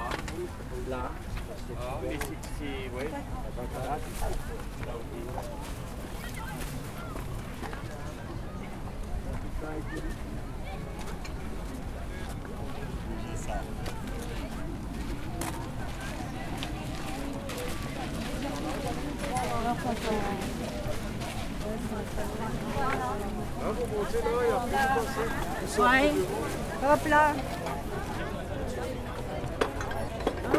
Neudorf Est, Strasbourg, France - Marché aux puces
Marché au puces Stade Strasbourg-Neudorf, déambulation, enregistrement Zoom H4N